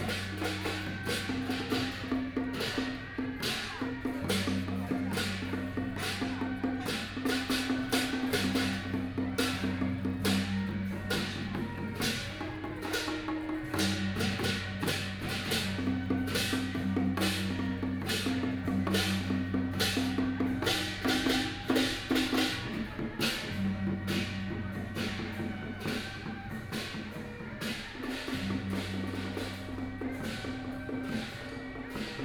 Chenghuangtempel van Taiwansheng, Taipei - Traditional Festivals
Nanguan, Traditional Festivals, Through a variety of traditional performing teams, Binaural recordings, Zoom H6+ Soundman OKM II
16 November, ~11:00